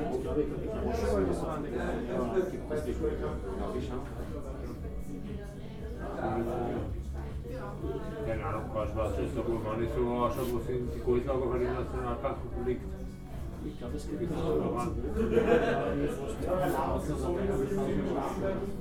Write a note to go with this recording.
schindler's heuriger, lederergasse 15, 4020 linz